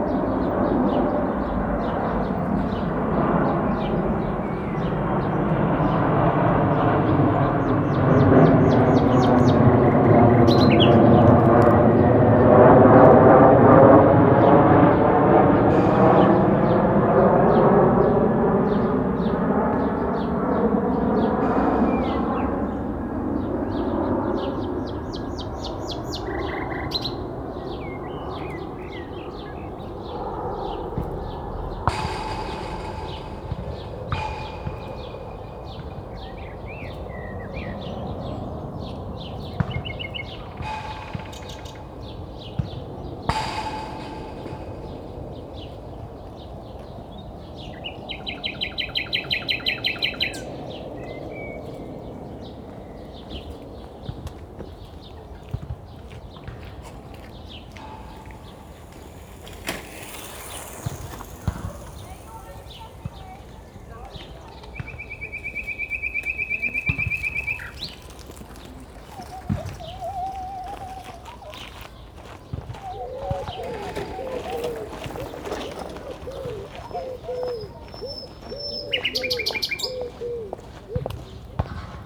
Pestalozzistraße, Berlin, Germany - Pankow Soundwalks anniversary in Covid-19 times: Extract 4 Nightingales and footballs striking the fence
Extract 4: Nightingales, footballs striking the fence. The 5 Pankow Soundwalks project took place during spring 2019 and April 27 2020 was the first anniversary. In celebration I walked the same route starting at Pankow S&U Bahnhof at the same time. The coronavirus lockdown has made significant changes to the soundscape. Almost no planes are flying (this route is directly under the flight path into Tegel Airport), the traffic is reduced, although not by so much, and the children's playgrounds are closed. All important sounds in this area. The walk was recorded and there are six extracts on the aporee soundmap.